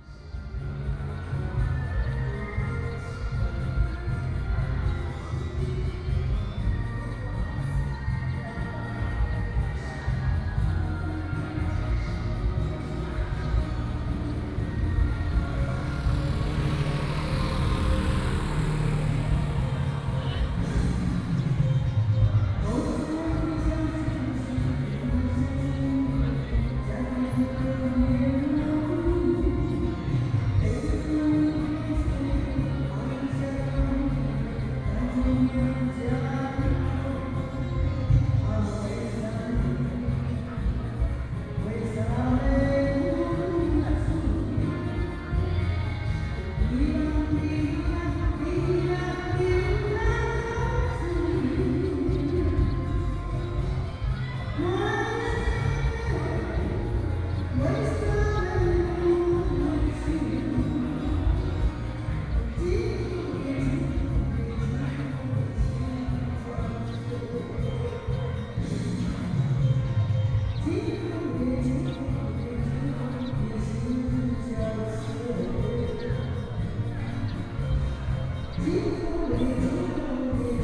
Daniao, Dawu Township, 大武鄉大鳥 - Karaoke
Aboriginal tribal entrance, Holidays many residents return to the tribe, birds sound, Karaoke, traffic sound